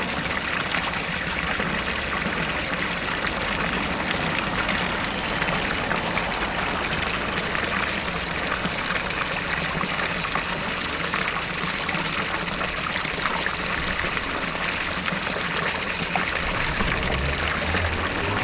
Fontaine place de la république
Sarreguemines
Place de la république